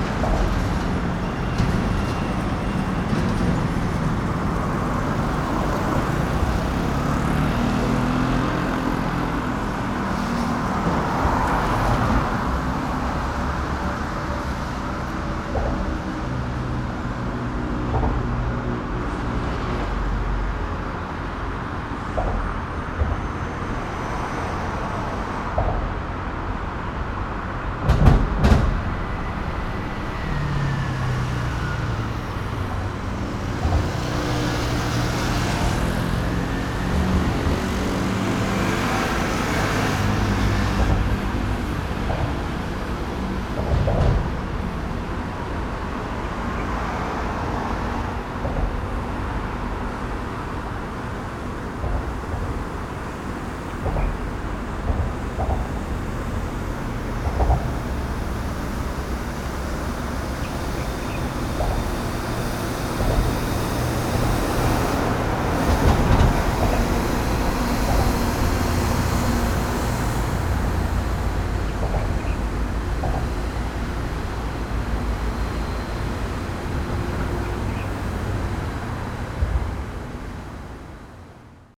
under the Viaduct, traffic sound
Sony PCM D50
9 April, 07:44